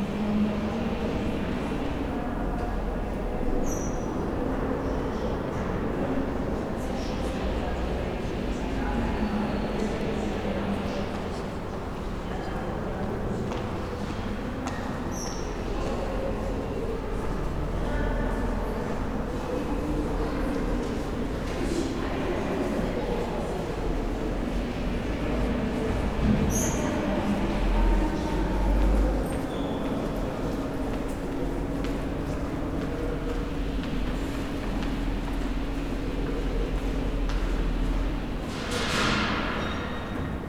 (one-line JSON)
{"title": "berlin, donaustraße: rathaus neukölln, bürgeramt, treppenhaus - the city, the country & me: neukölln townhall, citizen centre, stairwell", "date": "2013-02-18 11:02:00", "description": "the city, the country & me: february 18, 2013", "latitude": "52.48", "longitude": "13.44", "altitude": "41", "timezone": "Europe/Berlin"}